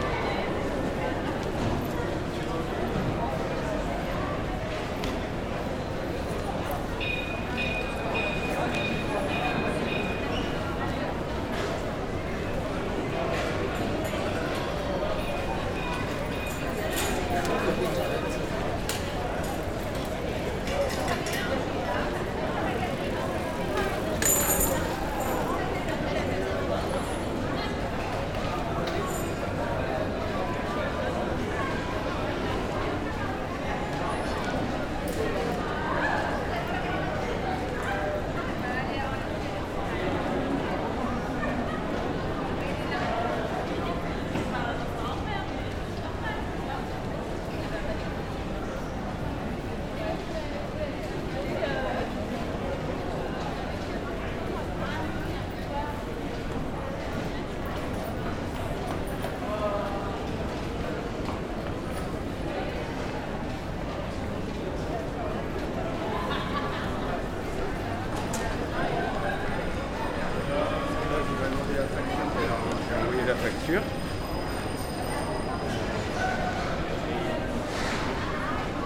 Gal Bordelaise, Bordeaux, France - Gal Bordelaise
Gal Bordelaise ambiance, atmosphere, street
Captation ZOOMH6
11 August 2022, 15:00